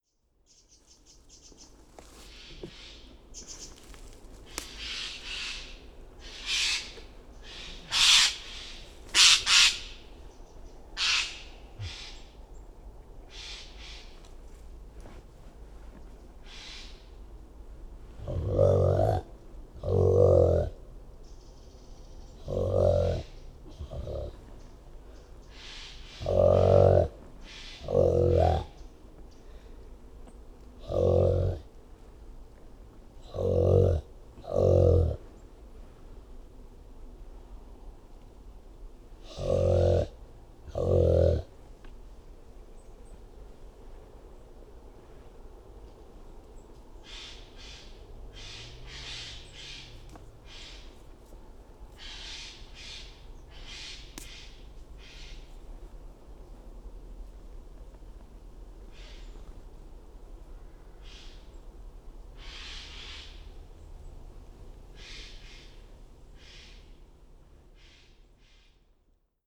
Near Chagford - Squawks and snorts: deer stalking with Nika
a dusk ramble with Nika the funky deer stalker near Chagford. A great evening of mindful listening and giggles. This was recorded using a Brady parabolic reflector, 2 Brady omni mics to an Olympus LS14
United Kingdom, October 2018